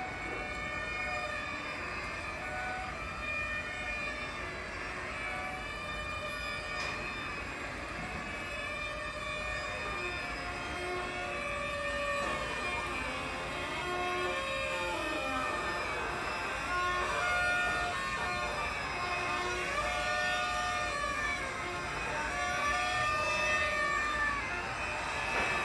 Symphony of Groans, Spreepark abandonned fun fair
Ghosts in the former East Berlin fun fair now derelict and overgrown. The magnificent and colourful big wheel is turning. How I don know - maybe wind, maybe a test of old machinery. The whole ground is private and enclosed but there are inviting holes in the fencing